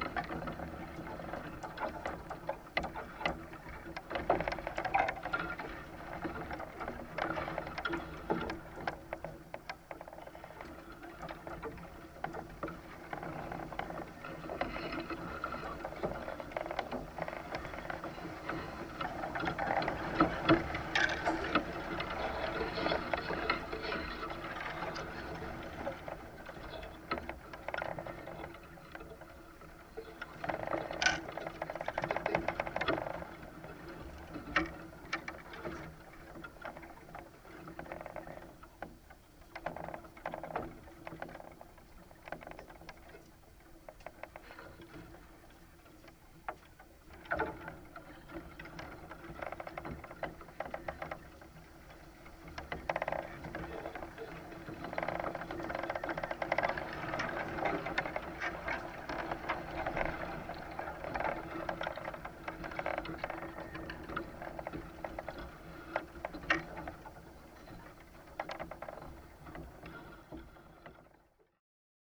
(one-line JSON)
{"title": "Parque Eduardo VII, Lisboa, Portugal - Bamboo canes in a light wind recorded with a contact mic", "date": "2017-09-14 16:05:00", "description": "Intermittent wind through a stand of bamboo recorded with a contact mic attached to two of the canes.", "latitude": "38.73", "longitude": "-9.16", "altitude": "84", "timezone": "Europe/Lisbon"}